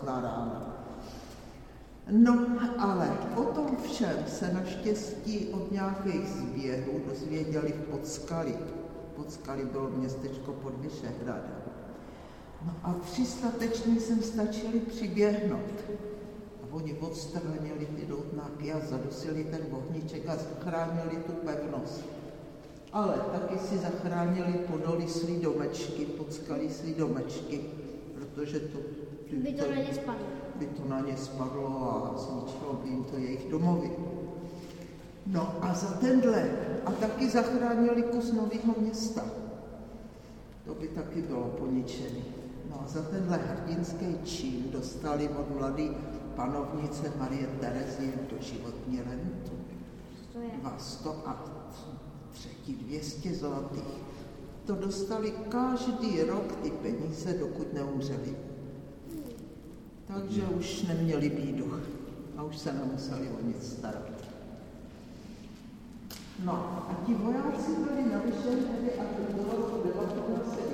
Gorlice, Vysehrad fortification underground system, Prague, Czech Republic - Inside the Gorlice
Excursion to the underground defense system of Vysehrad fortification. The Gorlice underground hall served in 18.century as a gathering place for troops, ammunition and food store. In recent history served as well as a bomb shelter and place to store vegetable - probably potatoes.
6 April 2012